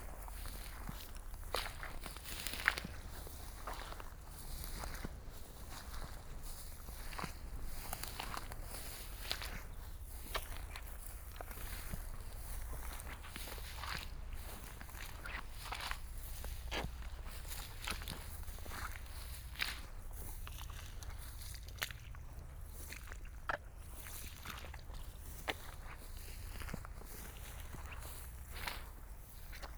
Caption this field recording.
Walking through heather, Sphagnum moss, peat, water, ST350, binaural decode, Glasson Moss Nature Reserve